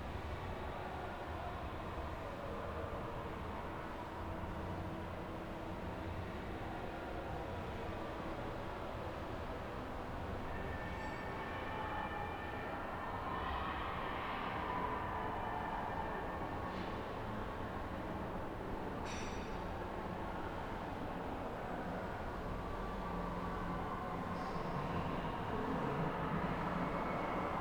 Brussel-Congres, Brussel, België - Brussel Congres Entry Hall
Entry hall of the semi-abandoned Brussel-Congres train station. Trains in the tunnels below, a creaking door leading to the tracks where workmen are working. Towards the end, the climate protesters arrive outside.
Bruxelles, Belgium, 31 January 2019, 10:51